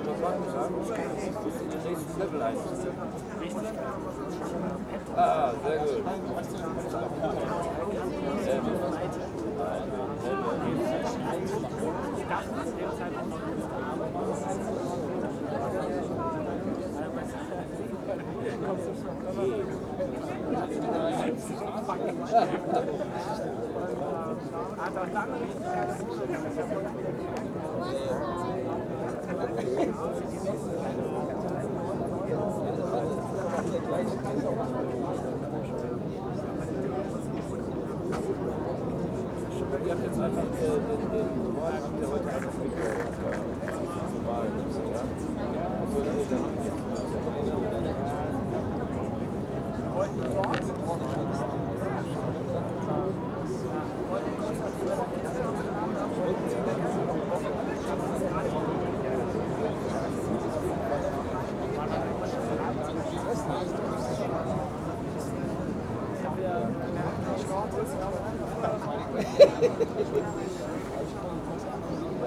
frankfurt/main: matthias-beltz-platz - the city, the country & me: in front of a kiosk
people enjoying a beer at a small kiosk
the city, the country & me: june 4, 2015